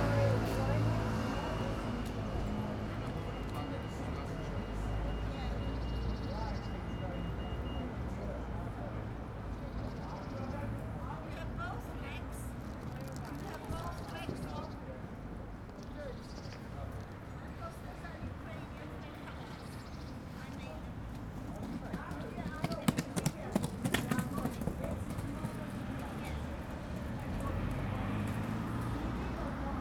Embassy of the Russian Federation - "Stop Putin, Stop the War!" 3
17 March 2022, 17:25